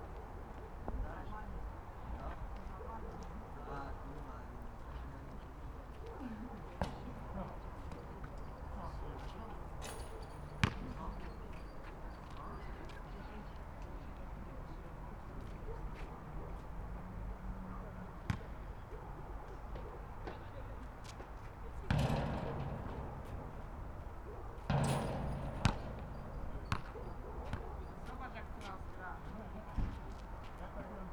Poznan, Sobieskiego housing estate - late evening horse game
a bunch of teenagers playing horse at the nearby basketball court. talking about the score and cursing heavily. the sound of basketball bouncing off the tarmac reverberates off the huge apartment buildings and around the estate.